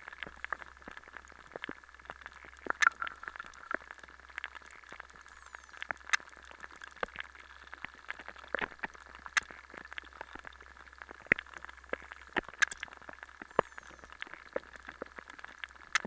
Stabulankiai, Lithuania, swamp underwater
Hydrophone in the swamp
Utenos apskritis, Lietuva, September 2021